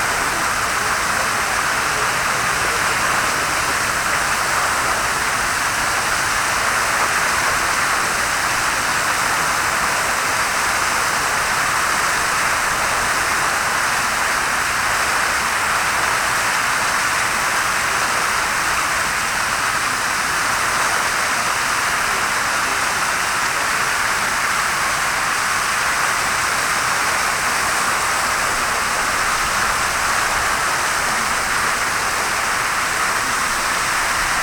Śródmieście Północne, Warszawa, Pologne - Fontanna Park Swietokrzyski
Fontanna Park Swietokrzyski w Palac Kultury i Nauki, Warszawa
Warsaw, Poland, 20 August 2013, ~11:00